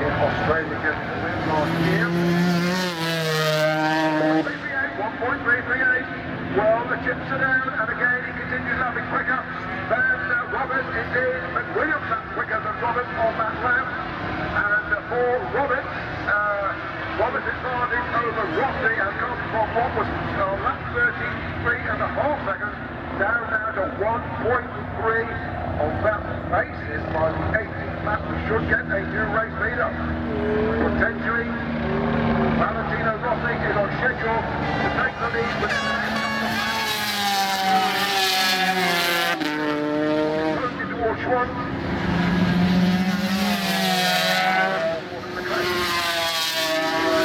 Castle Donington, UK - British Motorcycle Grand Prix 2000
500cc motorcycle race ... part one ... Starkeys ... Donington Park ... the race and all associated crowd noise etc ... Sony ECM 959 one point stereo mic to Sony Minidisk ...
Derby, UK, 9 July 2000